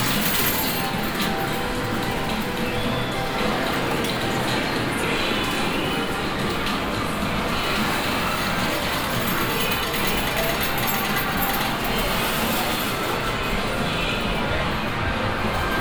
Another recording of the same place. This time in the third floor of the building with a little different game structure.
international city scapes - topographic field recordings and social ambiences